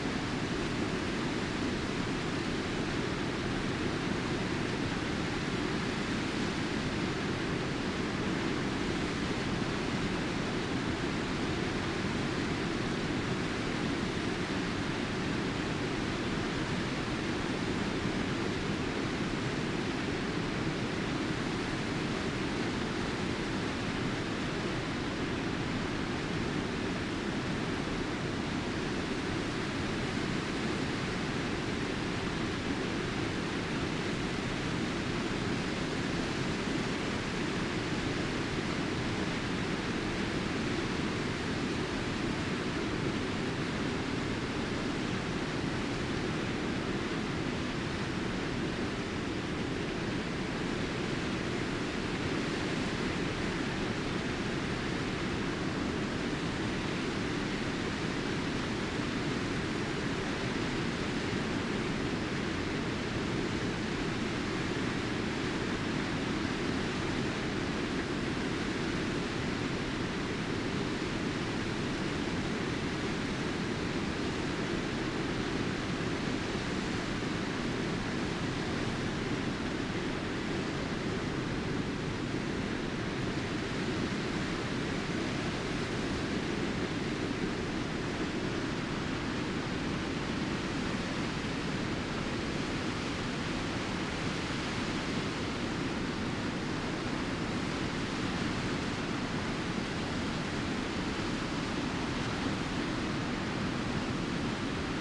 Island Štvanice, Praha, Česko - Water rolling over the Weir on the Vltava river
Water rolling continuously over the weir on the Vltava river by the hydroelectric power plant on the tip of the Štvanice island. Recorded on Zoom H5 with the SSH6 shotgun mic.